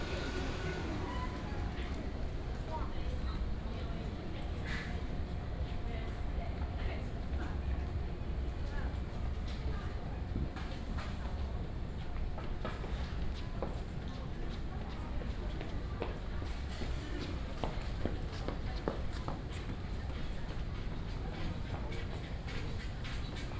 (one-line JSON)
{"title": "國立臺灣大學National Taiwan University, Taiwan - walking in the university", "date": "2016-03-04 17:27:00", "description": "walking in the university, Bicycle sound, Footsteps", "latitude": "25.02", "longitude": "121.54", "altitude": "19", "timezone": "Asia/Taipei"}